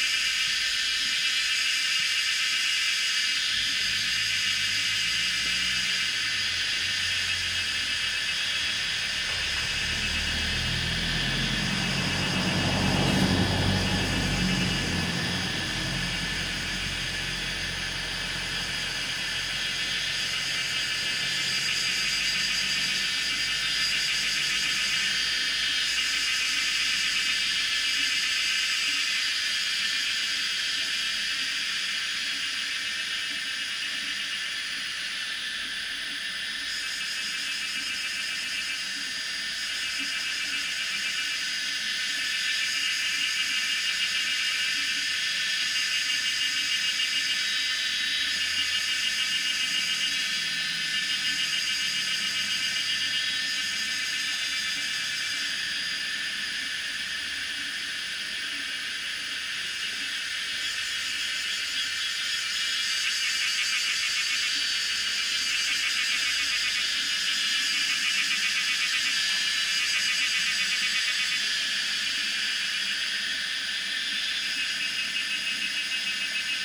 Taomi Ln., 桃米里茅埔坑 - In front of the temple square
Cicadas cry, The sound of water, Traffic Sound, In front of the temple square
Zoom H2n MS+XY
Nantou County, Puli Township, 桃米巷5號